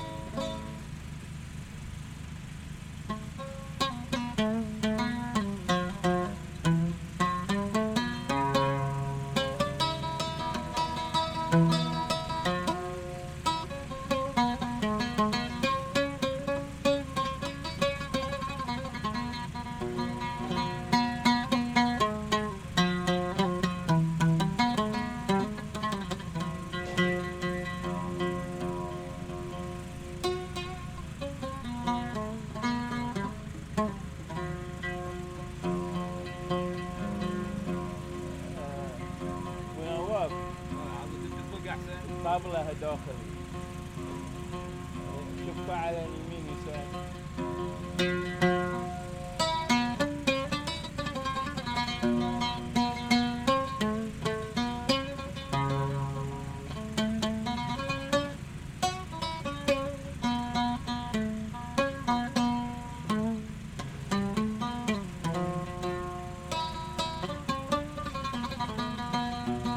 Avenue, الساية،،, الساية، Bahreïn - Duo de musiciens - Busaiteen Beach - Barhain
Duo Oud/Darbouka - Barhain
Busaiteen Beach
En fond sonore, le groupe électrogène. Malgré mes demandes répétées, ils n'ont ni voulu l'éteindre ni se déplacer...
محافظة المحرق, البحرين